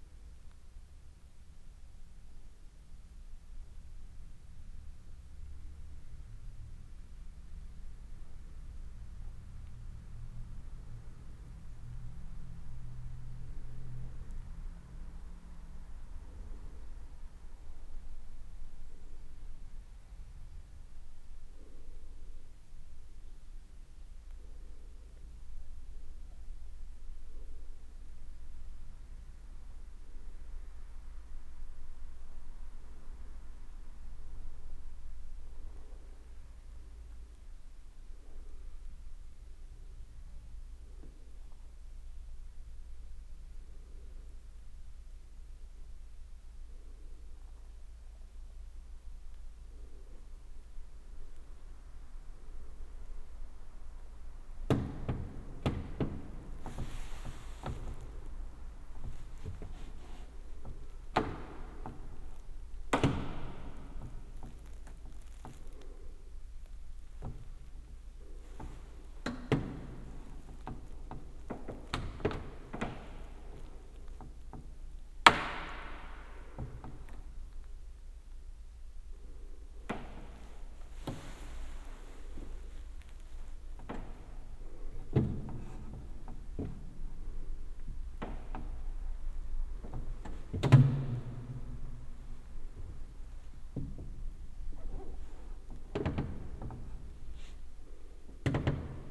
Roman Catholic church, Farna 9 street, Srem - bench wriggle
(binaural rec, please use headphones) recorded in an empty church. at the beginning just the ambience of the church, some noises from the street coming through the door. around one minute mark I started to move about on the wooden bench, pressing on it with my feet and arms. you can hear the crackle of the wood reverberated in the church. and rustle of my jacket. and my breathing. gain was set very high. (Roland R-07 + Luhd PM-01 bins)